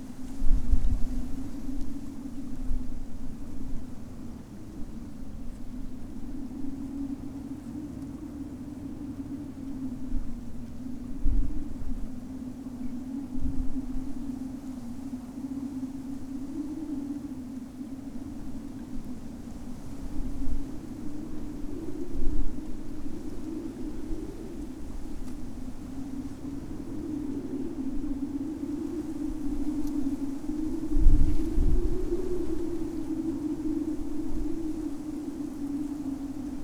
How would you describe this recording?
droning, humming electro wires in the spring's wind